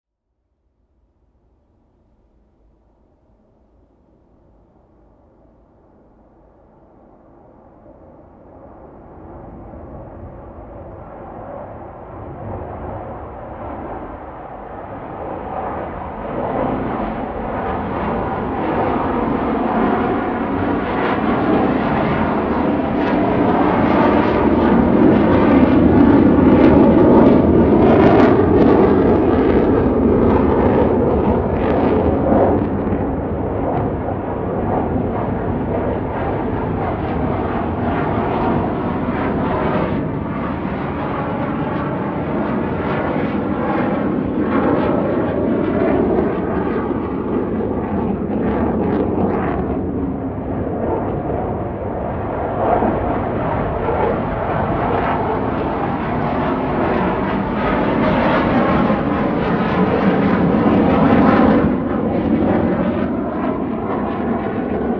On the river bank, There are fighters taking off in the distance, Zoom H2n MS+XY
15 September 2017, 14:18